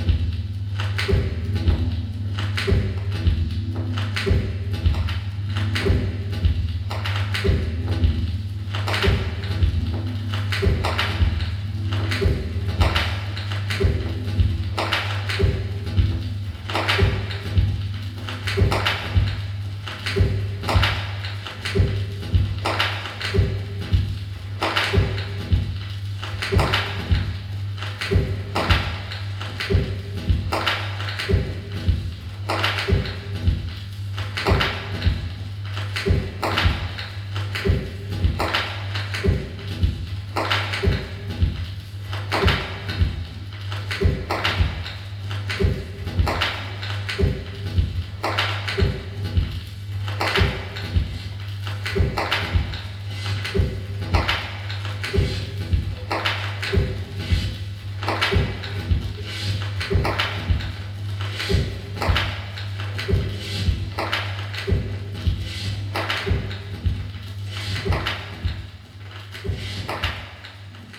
Inside the Studio 672 - a small party and concert location and formerly jazz club in the cellar of the main building. The sound of a turntable concert performed by Achim Mohné during a touch label evening.
soundmap nrw - social ambiences and topographic field recordings
Cologne, Germany, 23 April 2012